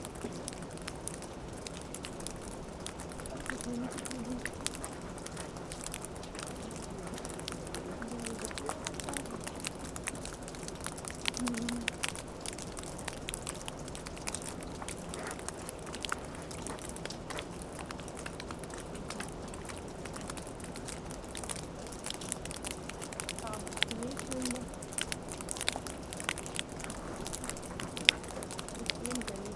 Lithuania, Ginuciai, melting snow
snow melts on the roof of old watermill